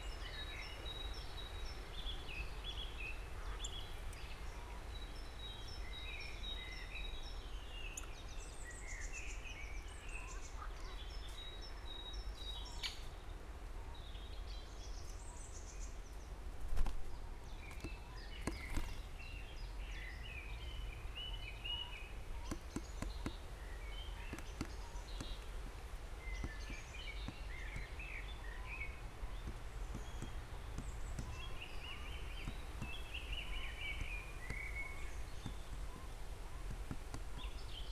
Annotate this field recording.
I wanted to record the frogs, but suddenly a great spotted woodpecker (Dendrocopos major, Buntspecht) arrived and started working on the wooden pole where the left microphone was attached too. So it goes. (Sony PCM D50, DPA 4060)